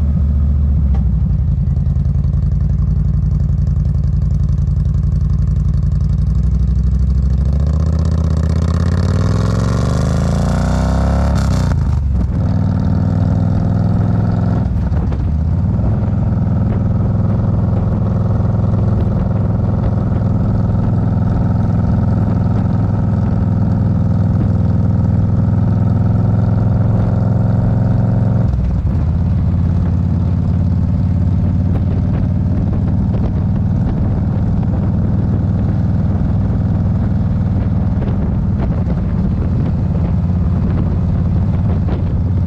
The Circuit Office, Oliver's Mount, Olivers Mount, Scarborough, UK - a lap of oliver's mount ...
a lap of oliver's mount ... on a yamaha xvs 950 evening star ... go pro mounted on sissy bar ... re-recorded from mp4 track ...